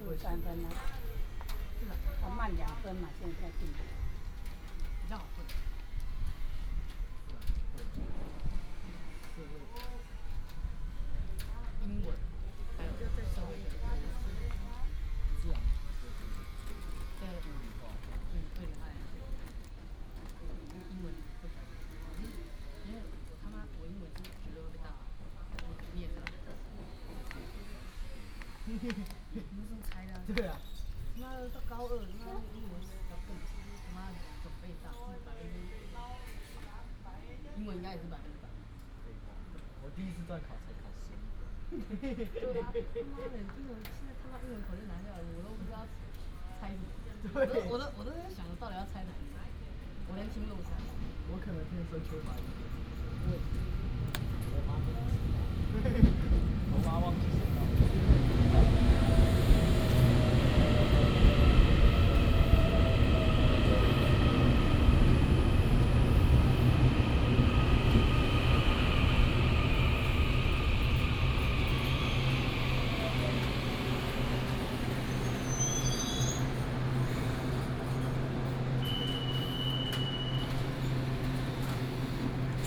At the station platform, Train arrived
Yangmei District, Taoyuan City, Taiwan, 18 January, 13:40